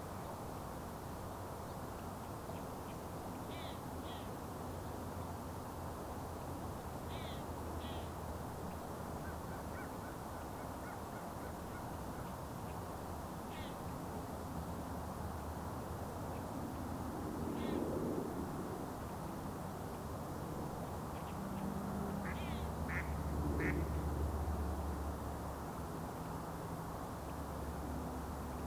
equipment used: Sound Devices Recorder
Colvert sur la rivière des Mille-îles à l'heure de pointe près de l'autoroute 15